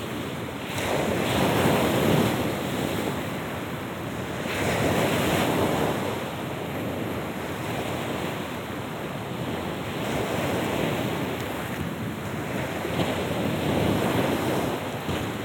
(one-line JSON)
{"title": "Roll forward waves, Russia, The White Sea. - Roll forward waves.", "date": "2015-06-21 23:30:00", "description": "Roll forward waves.\nНакат волны.", "latitude": "63.91", "longitude": "36.93", "timezone": "Europe/Moscow"}